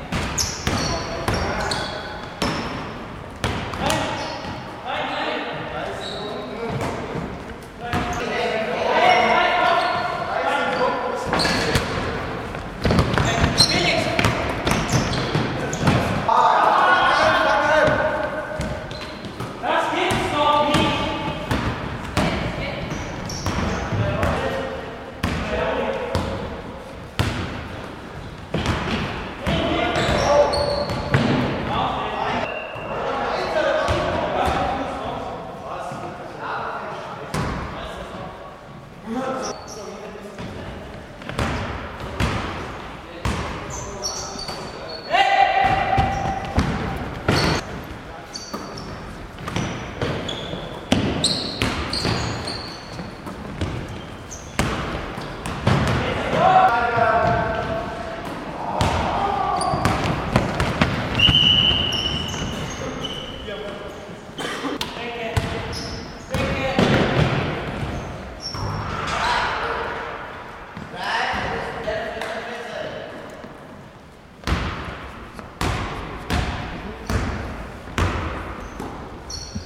Prague, Czech Republic - Deutsche Gymnasium Praha
German Gymnasium in Prag, Schwarzenberská 1/700, gym hall basketball match. The recording was used in 2001 for a sound installation in a gallery in Linz.
Prague-Prague, Czech Republic, 8 September 2001, 15:12